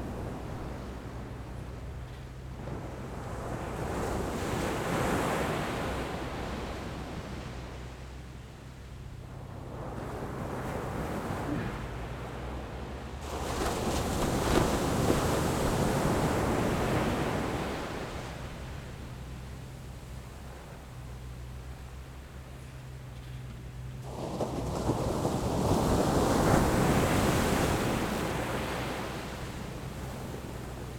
后沃村, Beigan Township - Sound of the waves
Sound of the waves, Very hot weather
Zoom H6 XY